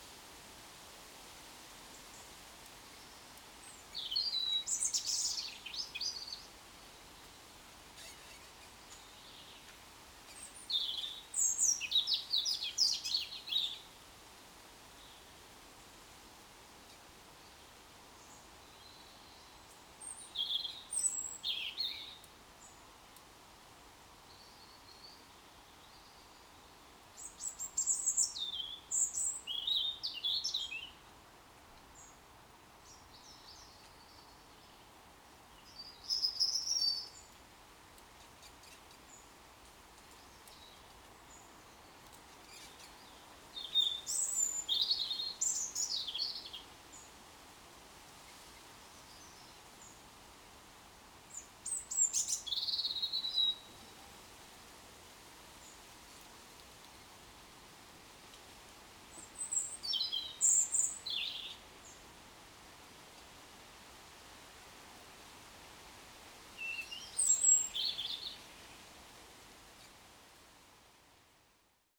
Blackbirds dawn chorus before sunrise. Kit used: a pair of DPA4060's in a Rycote + MixPre6.
19 November, 05:15